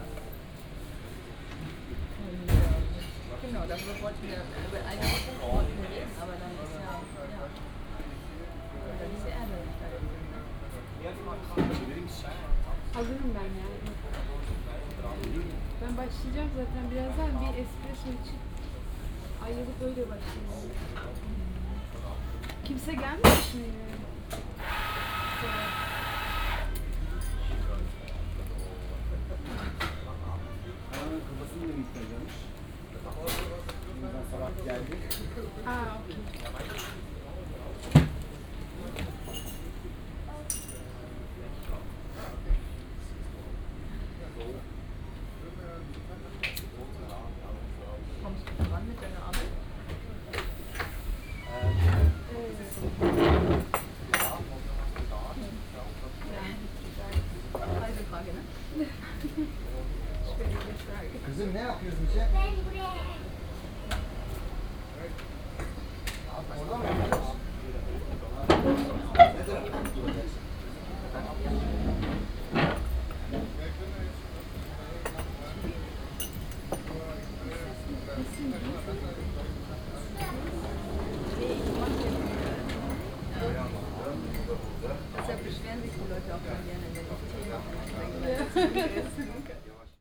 {
  "title": "Berlin, Cafe Kotti",
  "date": "2011-10-09 13:00:00",
  "description": "cafe kotti, at zentrum kreuzberg, 1 floor above street level, sunday ambience",
  "latitude": "52.50",
  "longitude": "13.42",
  "altitude": "41",
  "timezone": "Europe/Berlin"
}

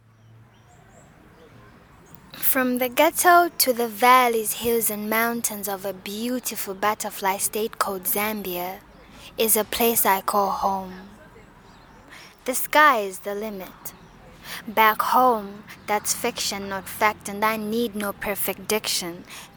{
  "title": "Showgrounds, Lusaka, Zambia - Yvonne Sishuwa aka Winter celebrates her ancestry place, her country, and her grandmother…",
  "date": "2012-07-28 16:10:00",
  "description": "We were making these recordings while sitting in a beautiful public garden ay Showgrounds; you’ll hear the birds and the sound of a pond in the background.\nYvonne is a student at UNZA and poet member of Bittersweet poetry Zambia.",
  "latitude": "-15.40",
  "longitude": "28.31",
  "altitude": "1262",
  "timezone": "Africa/Lusaka"
}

